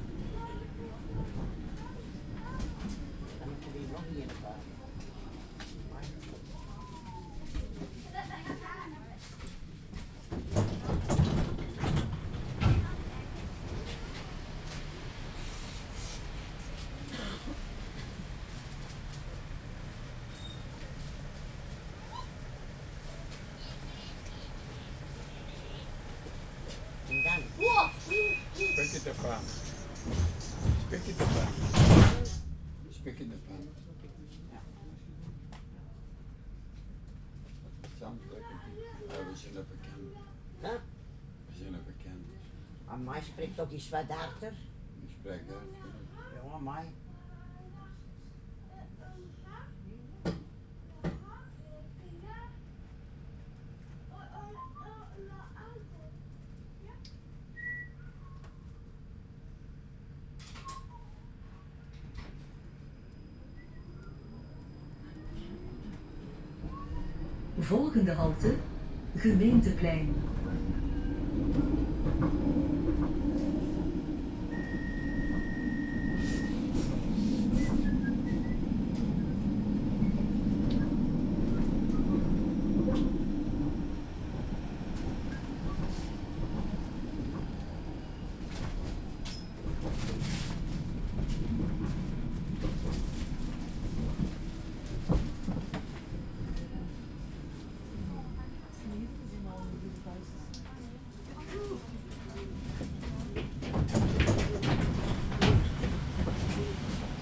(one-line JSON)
{"title": "Berchem, Antwerpen, België - tram 15", "date": "2010-02-15 15:45:00", "description": "driving with tram 15 from the city centre to suburb", "latitude": "51.19", "longitude": "4.42", "altitude": "16", "timezone": "Europe/Brussels"}